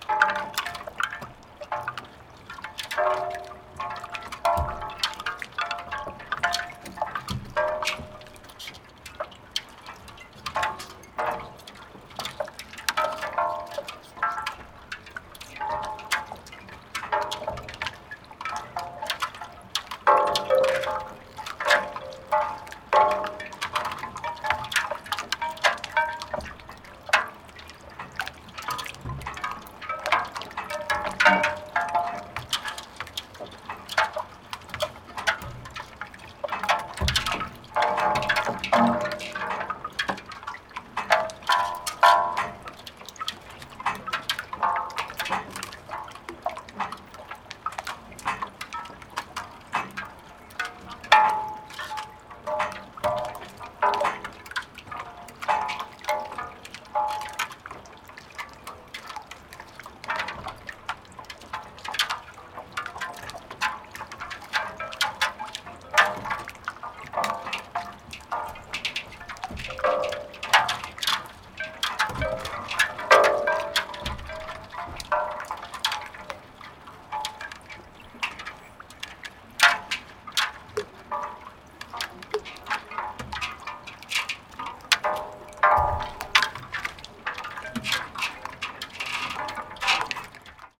Spree, Treptower Park - Pieces of ice on the Spree river
Ice smelting on the Spree River, close to the boats, twisting each others...
Recorded by a MS Setup Schoeps CCM41+CCM8 and a 633SD Recorder
Berlin, Germany, 30 January 2017, 16:00